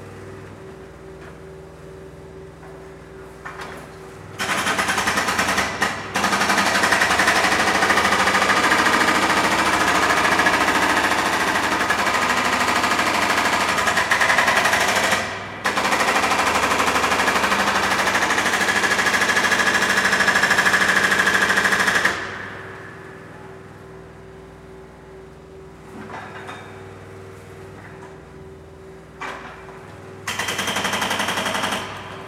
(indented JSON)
{
  "title": "Antoniusschacht, Zürich, Schweiz - Tunnelbau S-Bahn",
  "date": "1987-05-11 16:06:00",
  "description": "Menzi Muck nah\n1987",
  "latitude": "47.37",
  "longitude": "8.56",
  "altitude": "430",
  "timezone": "Europe/Zurich"
}